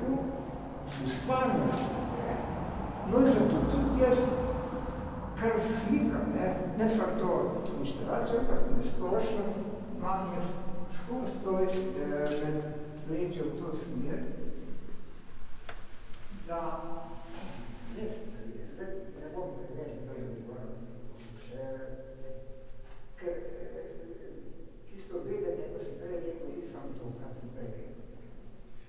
Špital chapel, Celje, Slovenia - tellings
Adolf Mlač telling his art ... chapel ambience